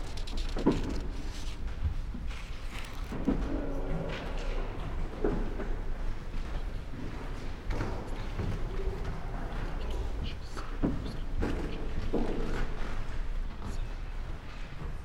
National and University Library, Ljubljana, Slovenia - reading room
sounds of ”silentio! spaces: wooden floor, chairs, desks, pencils, books, papers, steps, automatic door ...